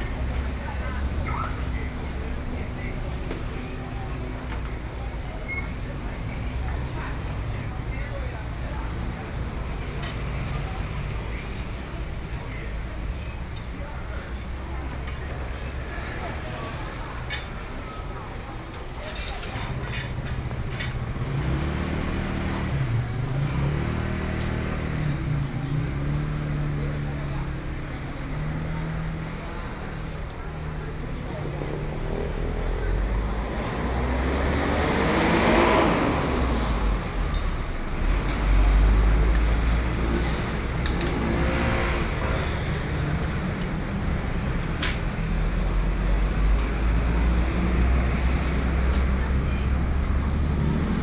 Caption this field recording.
Noon, the streets of the Corner, traffic noise, Hours markets coming to an end, Tidying up, Sony PCM D50+ Soundman OKM II